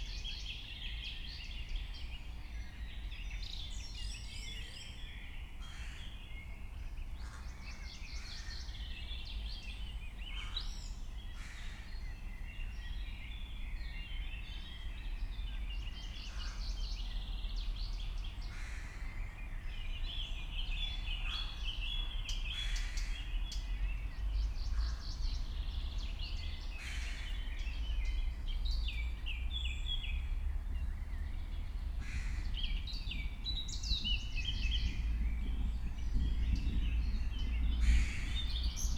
Maribor Studenski forest ambience. cars everwhere.
(SD702 DPA4060)